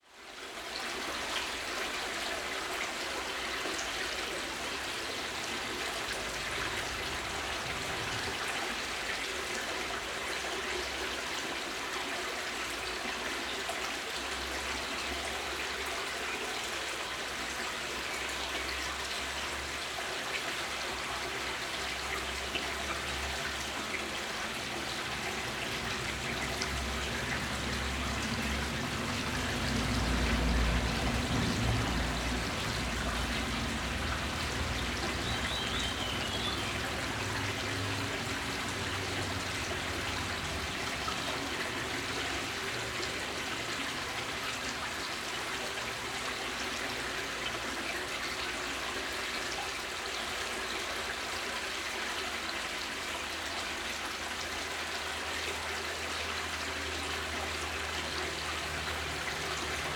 Maribor, Koroska cesta, Vinarjski potok - stream in tube under stret
Vinarjski potok, a little stream coming from the Vinarje area, crosses the street here in a big tube.
(SD702 AT BP4025)